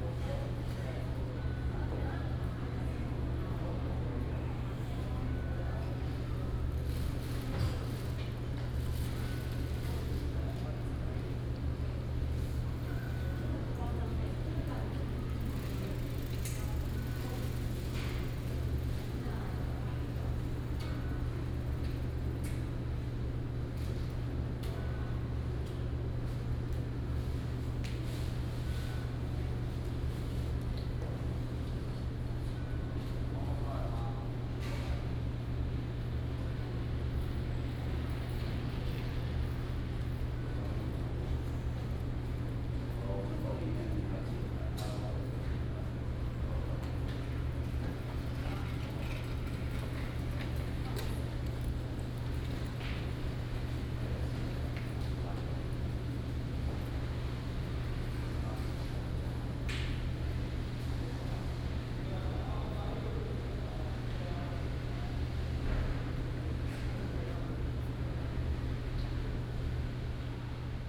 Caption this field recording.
At the university, Bicycle sound, Footsteps, Bell sound